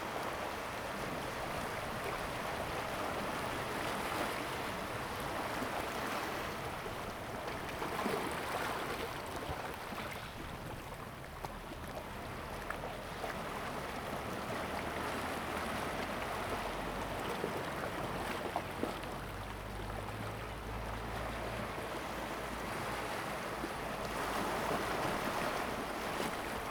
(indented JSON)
{
  "title": "恆春鎮砂島, Pingtung County - Tide",
  "date": "2018-04-23 07:11:00",
  "description": "On the coast, Sound of the waves, Birds sound, traffic sound\nZoom H2n MS+XY",
  "latitude": "21.91",
  "longitude": "120.85",
  "altitude": "3",
  "timezone": "Asia/Taipei"
}